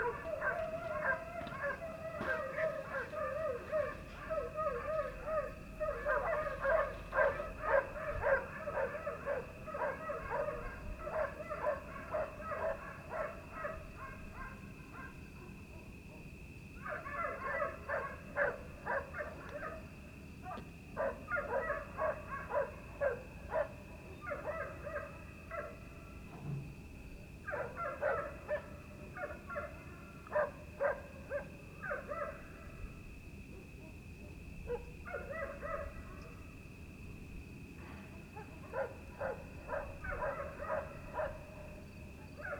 R. Casa Fidalga, Bordeira, Portugal - night ambience with dogs
the dogs of a nearby farm went crazy about something, some from the village responded
(Sony PCM D50, Primo EM172)